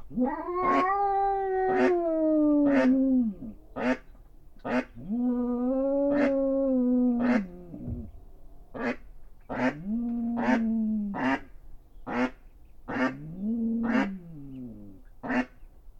While a hen mallard guards her ducklings in the middle of my pond 2 cats argue. They might have been responsible for the losses to the brood over this week.